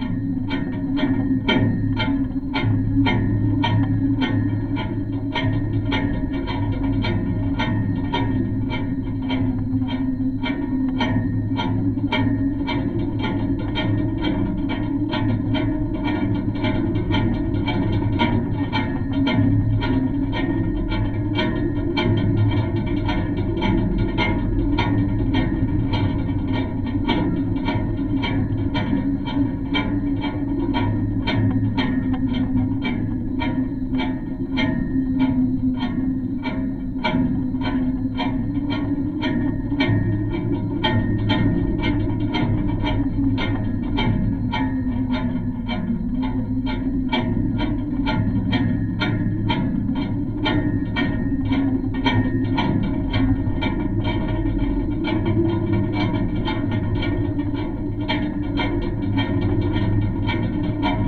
Kos, Greece, flag pole contact
contact microphones on the flag pole of Kos castle